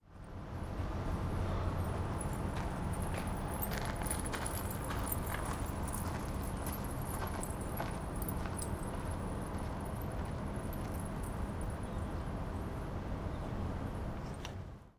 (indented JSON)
{
  "title": "Tallinn, Harju - little bells",
  "date": "2011-07-11 04:55:00",
  "description": "the exhausted traveler, on his descent to the south, rests for a moment on the bench and listens to the sound of this early morning",
  "latitude": "59.44",
  "longitude": "24.74",
  "altitude": "31",
  "timezone": "Europe/Tallinn"
}